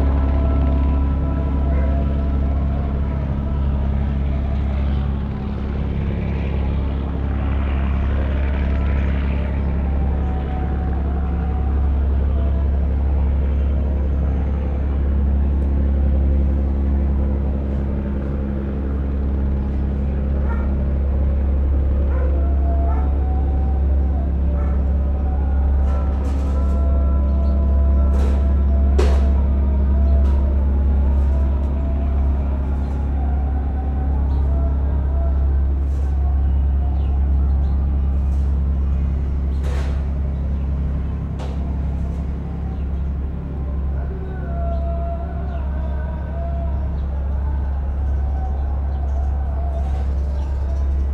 Istanbul, sur les hauteurs. Sons du Bosphore et d'un chantier proche. mai 2007
Beyoğlu/Istanbul Province, Turkey